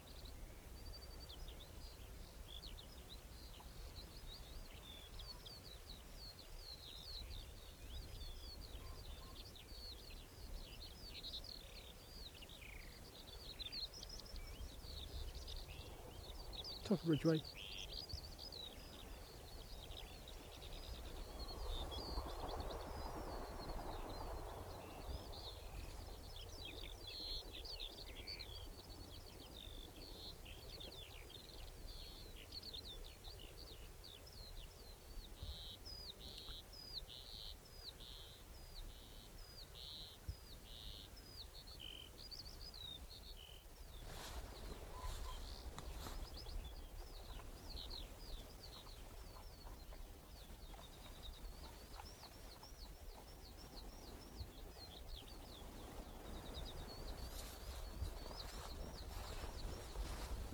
{"date": "2010-06-01 11:17:00", "description": "top ridgeway - grass blowing in breeze, birds chirping. In far distance a train goes by.", "latitude": "50.65", "longitude": "-2.49", "altitude": "52", "timezone": "Europe/London"}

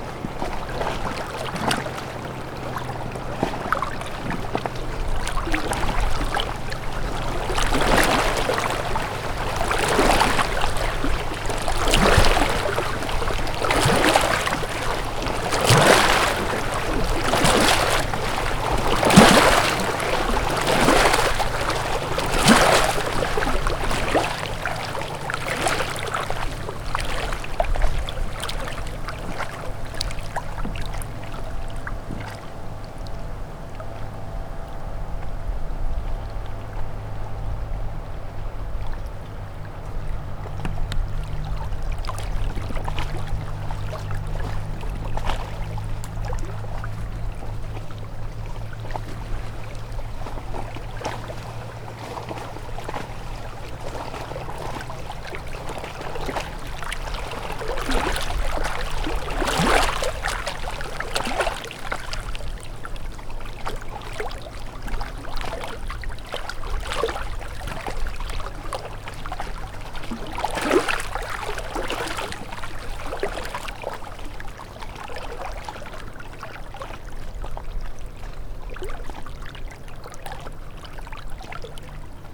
{"title": "Fulda Ufer mit Boot", "date": "2010-07-18 19:03:00", "description": "World Listening Day, Fulda Ufer mit Motorboot", "latitude": "51.36", "longitude": "9.55", "altitude": "137", "timezone": "Europe/Berlin"}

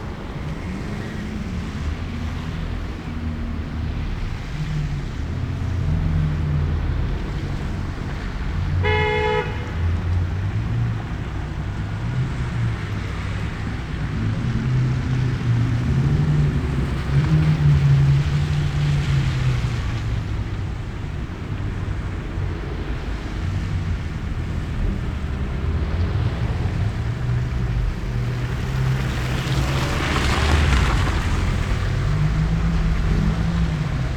{"title": "Berlin: Vermessungspunkt Maybachufer / Bürknerstraße - Klangvermessung Kreuzkölln ::: 09.12.2010 ::: 16:09", "date": "2010-12-09 16:09:00", "latitude": "52.49", "longitude": "13.43", "altitude": "39", "timezone": "Europe/Berlin"}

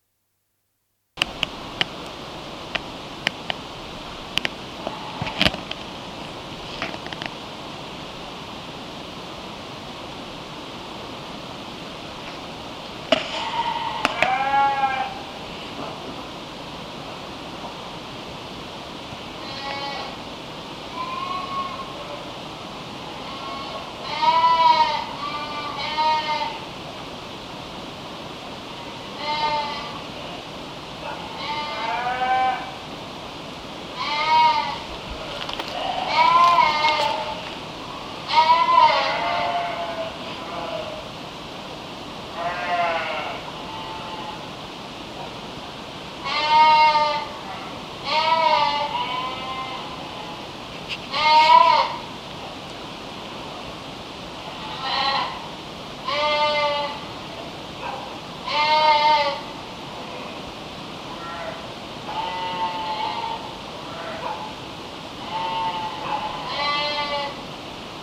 {"title": "Vigneulles, In front of the cemetery at night", "description": "Vigneulles, at night, a minuscule cemetery at the top of the village, on the way to RosiÃ¨res, and few mad sheeps talking.", "latitude": "48.56", "longitude": "6.33", "altitude": "243", "timezone": "GMT+1"}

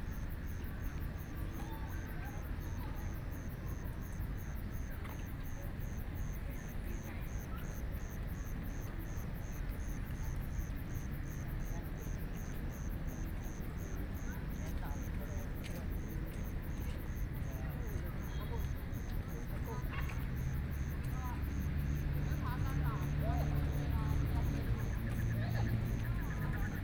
{"title": "BiHu Park, Taipei City - Sitting next to the lake", "date": "2014-03-19 20:27:00", "description": "Sitting next to the lake, Traffic Sound, People walking and running, Frogs sound\nBinaural recordings", "latitude": "25.08", "longitude": "121.58", "altitude": "20", "timezone": "Asia/Taipei"}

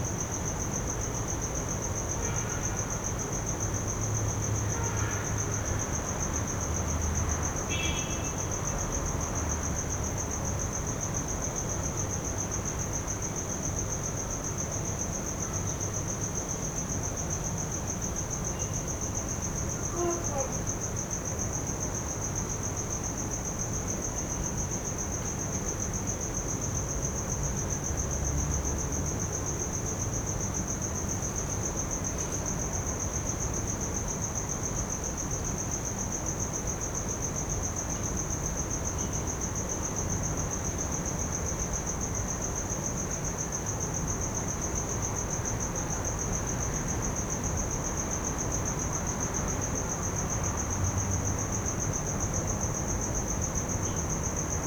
{"title": "Windhoek, Hotel Pension Steiner, Garden - Hotel Pension Steiner, Garden", "date": "2019-04-30 22:03:00", "description": "in a room, maybe dreaming bad, calling for papa", "latitude": "-22.57", "longitude": "17.08", "altitude": "1668", "timezone": "Africa/Windhoek"}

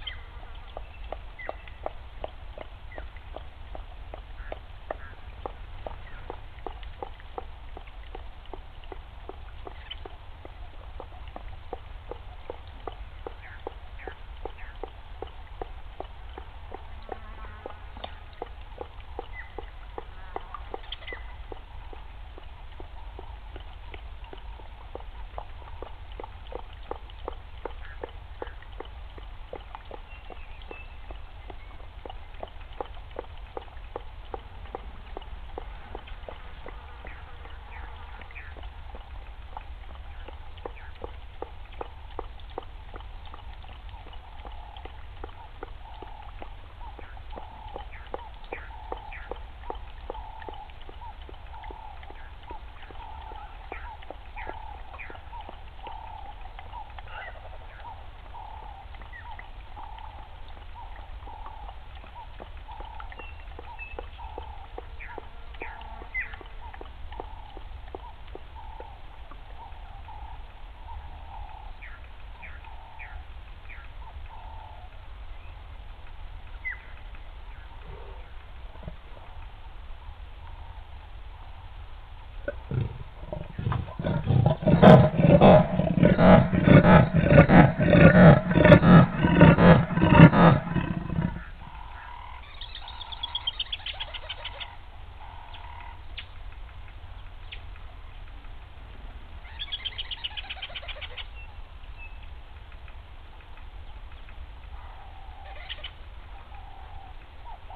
{"title": "Balule Private Game Reserve - Leopard Drinking", "date": "2017-07-07 16:30:00", "description": "Rosies Pan. Leopard growls (36sec), drinks (1m35sec) and growls again (3min10sec). Squirrels.", "latitude": "-24.21", "longitude": "30.86", "altitude": "457", "timezone": "Africa/Johannesburg"}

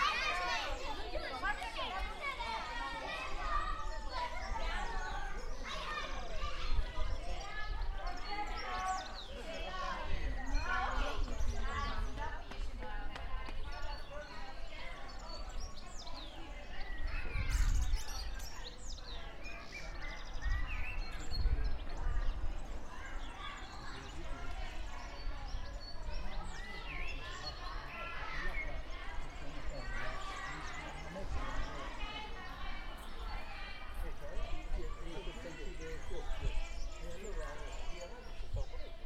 {"title": "Piazza IV Novembre, Serra De Conti AN, Italia - Old men and children", "date": "2018-05-26 15:33:00", "description": "Walking in the park between old men and children.\nRecorded with a Zoom H1n.", "latitude": "43.54", "longitude": "13.04", "altitude": "220", "timezone": "Europe/Rome"}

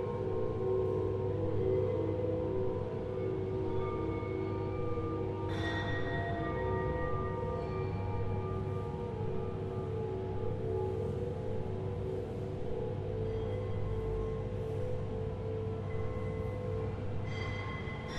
{"title": "Museun of Music, sound performance", "date": "2007-05-03 20:08:00", "description": "A fragment of the recordings of a sound performance for 15 lydes, 7 pitchforks and two vacuumcleaners, performed in the hall of Museum of Czech Music in Karmelitská and continuing as a marching band between the Museum and the building of Academy of Performing Arts on Malostranské náměstí. The event was part of the program of a exhibition Orbis Pictus. The Lyde is designed by Dan Senn. the group of music amateurs gathered just before the performance and we played the instruments standing on two floors of the ambits of the main hall of the space a former church. The acoustics provided for the improvised music a resonant environment.", "latitude": "50.08", "longitude": "14.40", "altitude": "203", "timezone": "Europe/Prague"}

